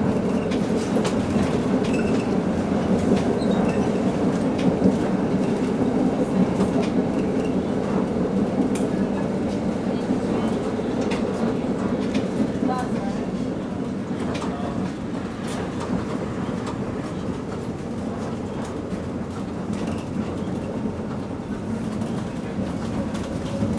Old model tram.
Tech Note : Olympus LS5 Internal microphones.
Région de Bruxelles-Capitale - Brussels Hoofdstedelijk Gewest, België / Belgique / Belgien, 19 May 2022